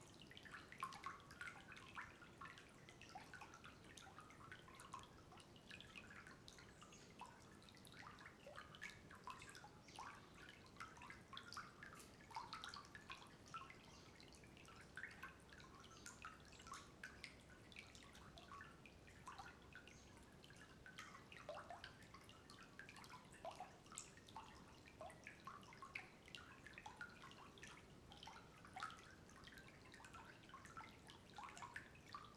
Lithuania, country, under the bridge
as waters flow under the little bridge...